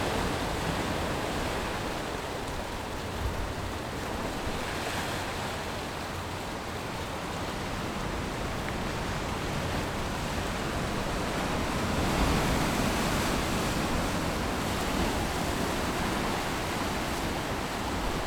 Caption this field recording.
On the coast, Sound of the waves, Very hot weather, Zoom H6+ Rode NT4